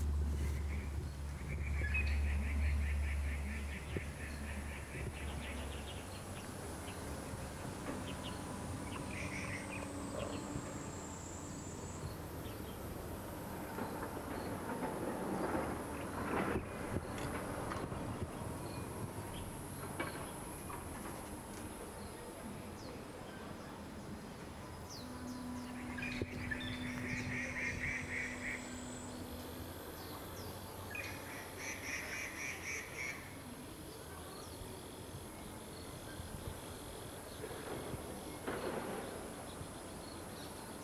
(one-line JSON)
{"title": "生津製茶廠 - 茶園裡的聲音", "date": "2021-08-24 11:16:00", "description": "林口生津製茶行後方茶園的環境聲音", "latitude": "25.09", "longitude": "121.37", "altitude": "240", "timezone": "Asia/Taipei"}